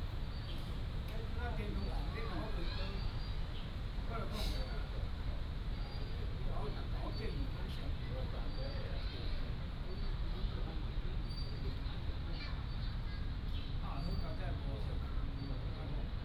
臺南公園, Tainan City - in the Park
Old man chatting, Distant child game area
Tainan City, Taiwan